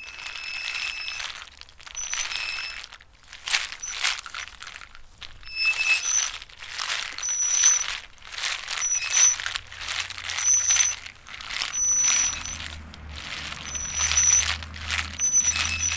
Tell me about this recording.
Espace culturel Assens, Nüsse Nusserste, der Musiksound ist zeitgenössisch, die Erfindung ist typisch französisch